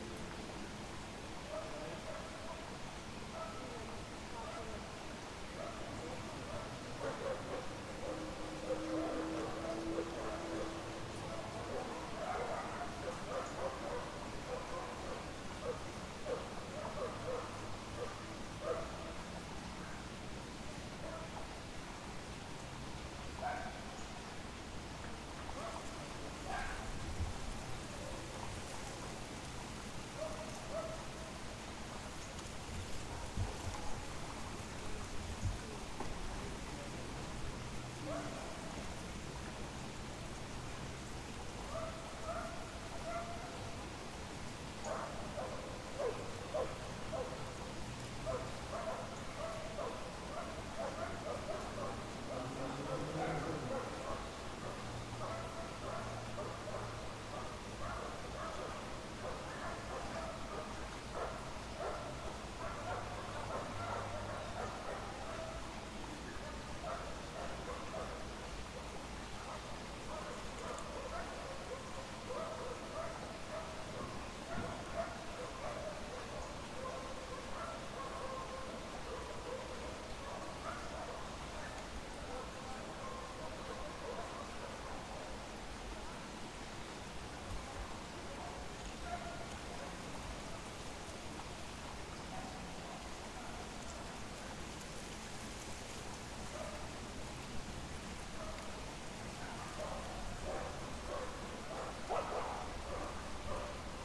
Sunnydene Park - sanctuary
Recording made in a park near my house in Toronto.